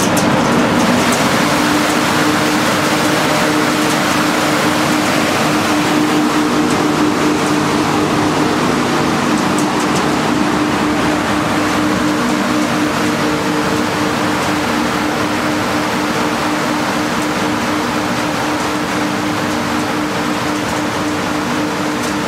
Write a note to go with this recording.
Akademie der Künste; aircondition